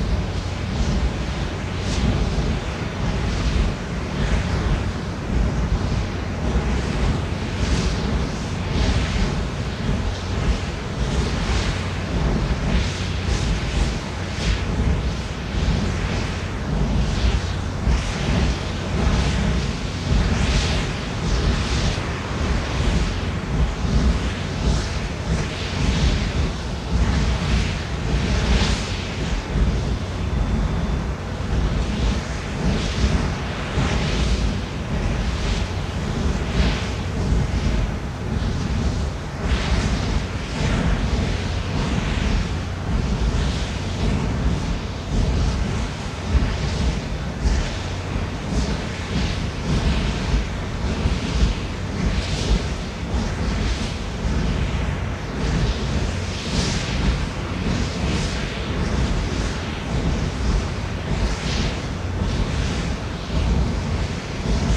Litvínov, Czech Republic - Gas flares in the wind, Unipetrol, Litvinov
Totally surrounded by kilometers of gleaming pipes, towers and storage tanks.
20 October 2016, ~14:00